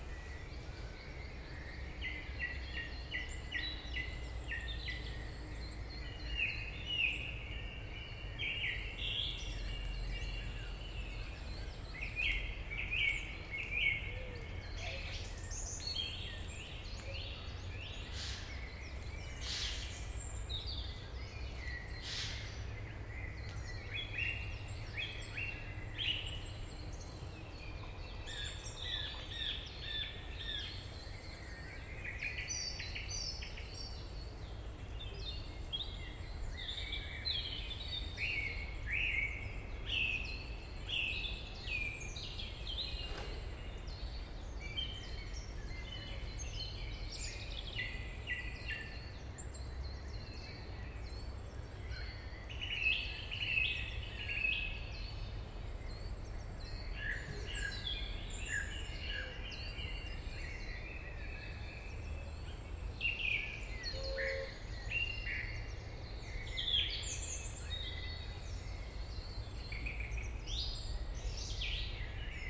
Forêt domaniale du Montcel, vaste espace forestier, fréquenté par les grives et de nombreux oiseaux. La cloche du Montcel.